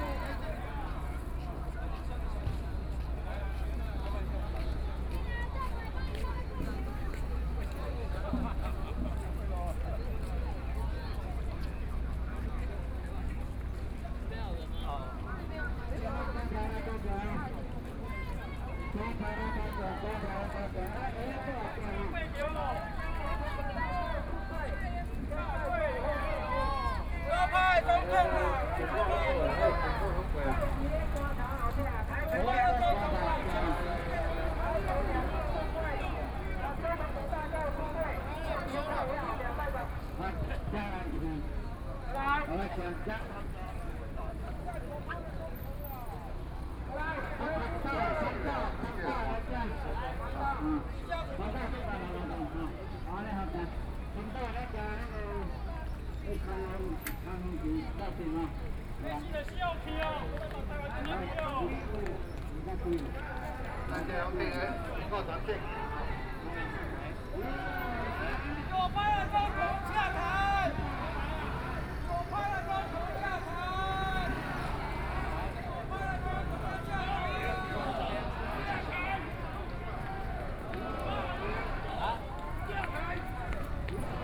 Zhongshan S. Rd., Taipei City - Protest
Protest, Sony PCM D50 + Soundman OKM II